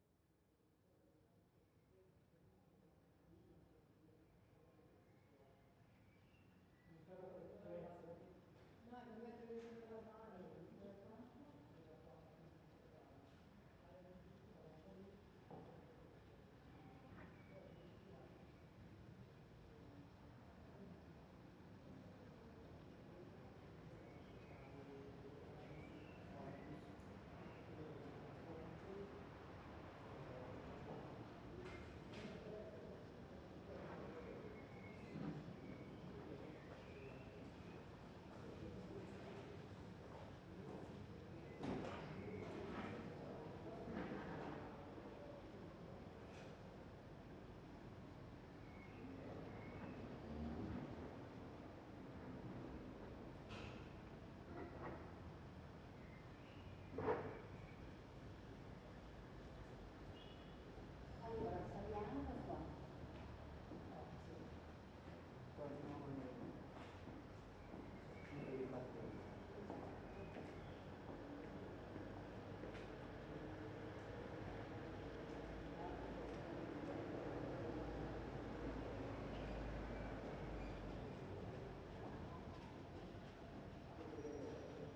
recording of the courtyard during daytime, before b. viola`s "reflections" exhibition opening may 11th 2012. 2 x neumann km 184 + sounddevice 722 @ villa panza, varese.